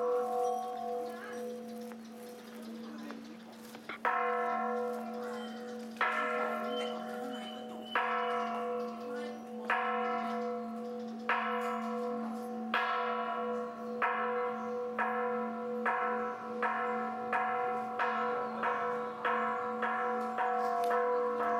gong wat sangwet witsayaram bangkok